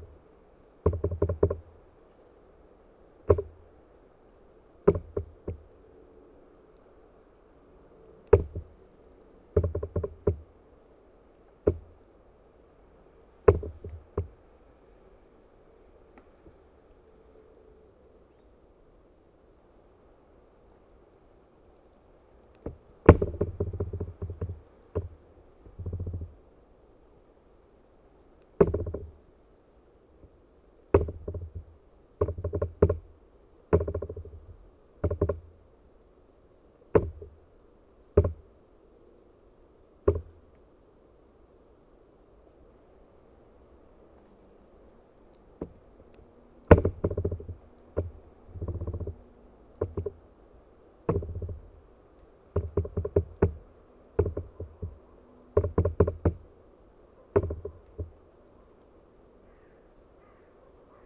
Utena, Lithuania, rubbing trees - rubbing trees
she trees rub at each other they always produce these strange, and every time different sounds
28 February 2012